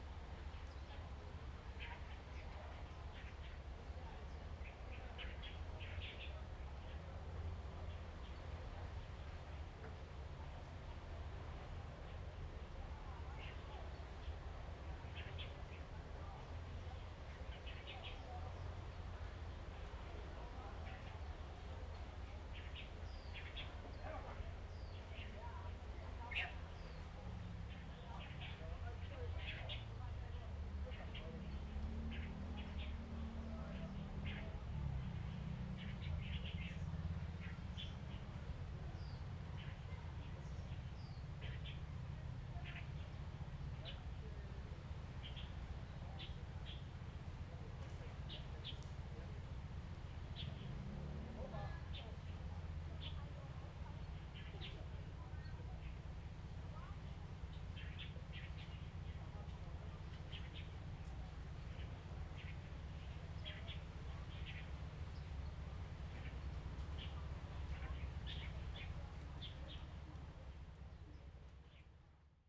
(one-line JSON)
{"title": "山豬溝, Hsiao Liouciou Island - In the woods and caves", "date": "2014-11-01 11:58:00", "description": "Tourists, Sound of the waves, Birds singing, In the woods and caves\nZoom H2n MS +XY", "latitude": "22.34", "longitude": "120.36", "altitude": "10", "timezone": "Asia/Taipei"}